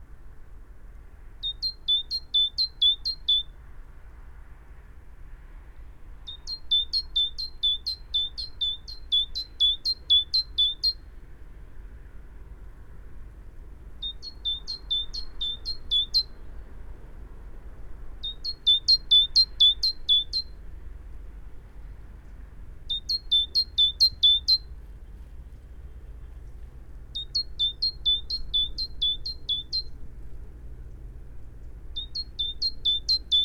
Great tit territory ... calls and song from a bird as the breeding season approaches ... lavalier mics in a parabolic ... background noise ...